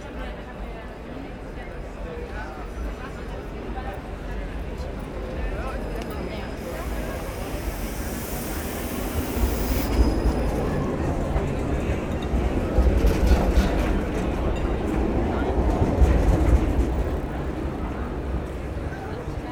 Groentenmarkt, Gent, Belgium - The pleasant Ghent city on a sunny sunday afternoon
On a very sunny sunday, during a smooth autumn, its a good day to take a walk on the pleasant city of Gent (Gent in dutch, Gand in french, Ghent in english). Its a dutch speaking city. In this recording : tramways driving on a curve, very very very much tourists, street singers, ice cream, white wine, oysters, cuberdon (belgian sweets). Nothing else than a sweet sunday afternoon enjoying the sun and nothing else matters. Walking through Korenmarkt, Groentenmarkt, Pensmarkt, Graslei.